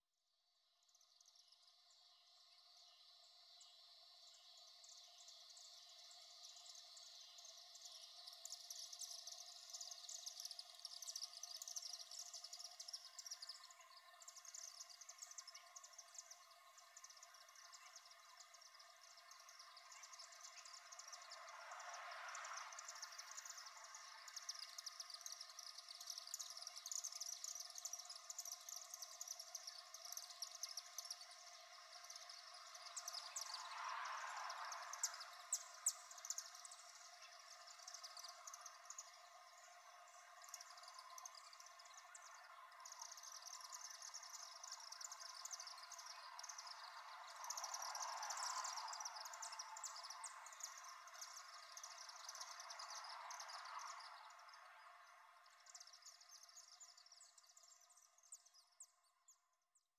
Corner of W. Perry and N. Marion Sts., Bluffton, IN, USA - Evening sounds, downtown Bluffton, IN
Evening sounds (barn swallows and cicadas), downtown Bluffton, IN. Recorded at an Arts in the Parks Soundscape workshop sponsored by the Indiana Arts Commission and the Indiana Department of Natural Resources.
Indiana, USA, 20 July 2019